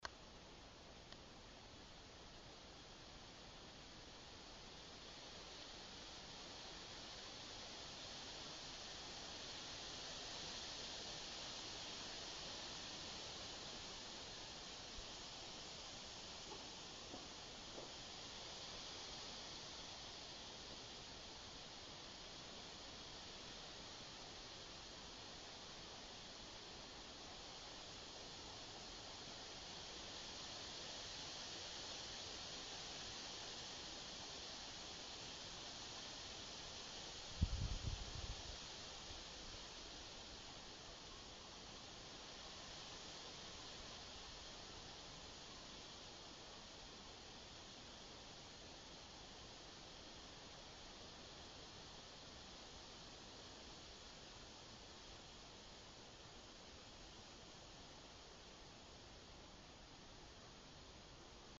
Vrångö, poplars whistling
Not more than wind in the leaves of this quiet islands poplar trees. Passively induced silence.